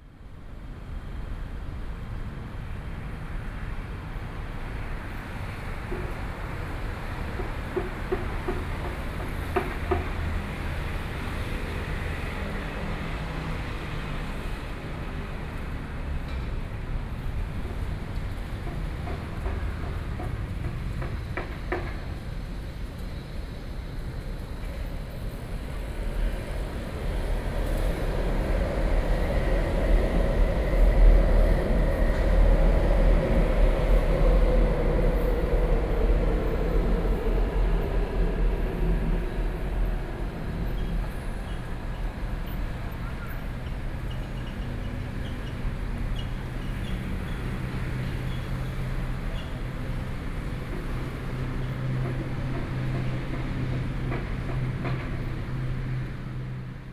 Taipei, Taiwan - Under the MRT track
Beitou District, 東華街一段500號, November 2012